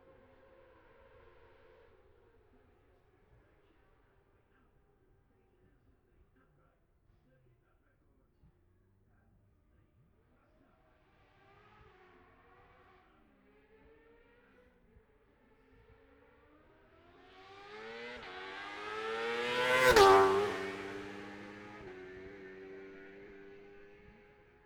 Jacksons Ln, Scarborough, UK - olivers mount road racing 2021 ...
bob smith spring cup ... F2 sidecars qualifying ... luhd pm-01 mics to zoom h5 ...